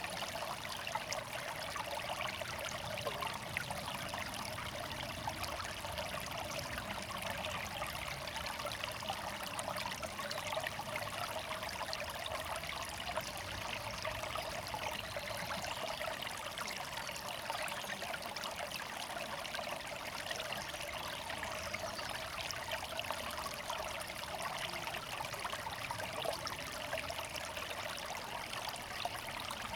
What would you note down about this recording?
sound of water flow near confluence of Graben 30 and Liezengraben ditches, (Tascam DR-100 MK3)